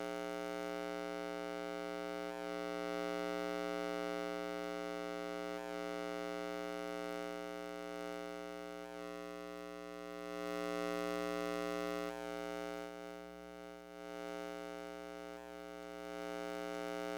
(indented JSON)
{
  "title": "244台灣新北市林口區運動公園 公車站牌 - 公車站牌電磁波",
  "date": "2021-08-20 11:56:00",
  "latitude": "25.07",
  "longitude": "121.38",
  "altitude": "253",
  "timezone": "Asia/Taipei"
}